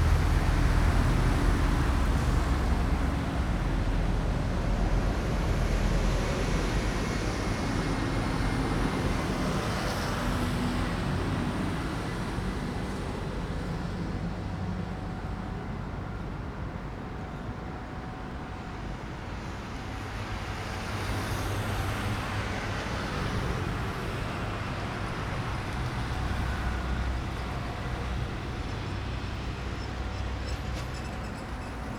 Zuoying - Train traveling through
Warning tone, Train traveling through, Traffic Noise, Rode NT4+Zoom H4n